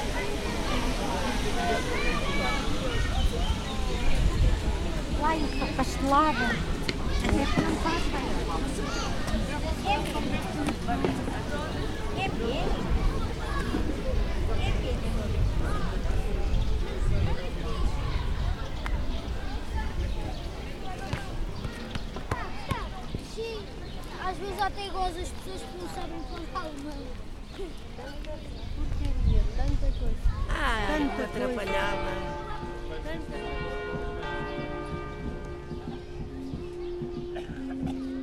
A recording made during a concert day in this park.